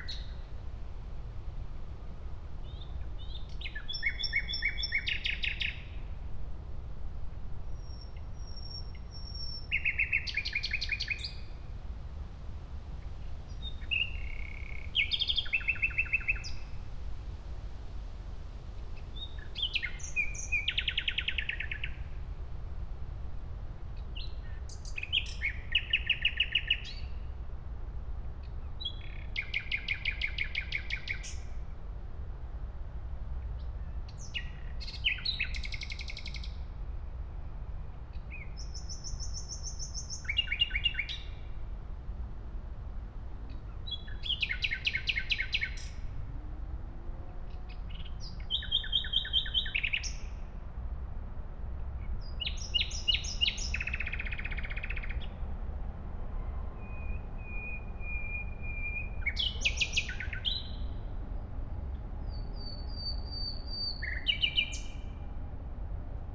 Gleisdreieck, Kreuzberg, Berlin, Deutschland - nightingale, city hum
lovely nighingale song at Gleisdreickpark / Technikmuseum, city hum with traffic and trains
(SD702, MKH8020 AB60)